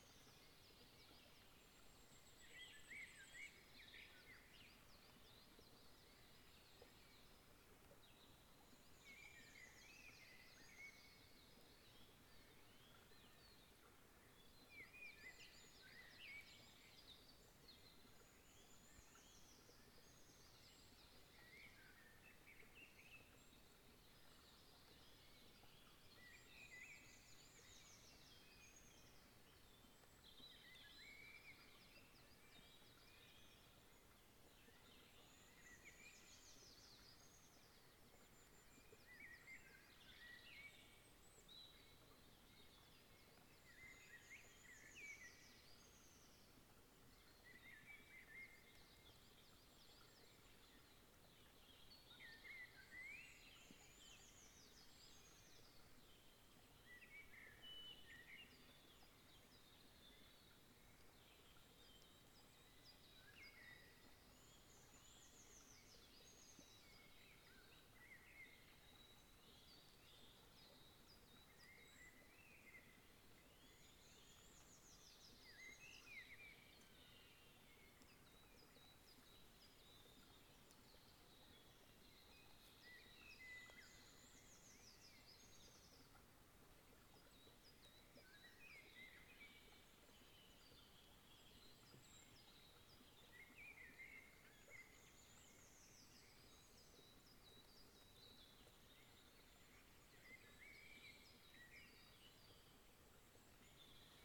Freidrichsbrunnen - Morgenstimmung am Bachlauf
Leises Murmeln des Baches, Vogelstimmen